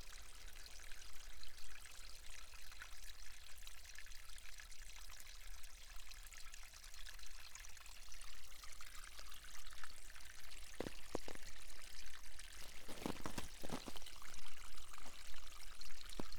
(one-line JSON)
{"title": "fourth pond, piramida, maribor - stream poema for lying trees", "date": "2014-02-03 17:32:00", "latitude": "46.57", "longitude": "15.65", "altitude": "332", "timezone": "Europe/Ljubljana"}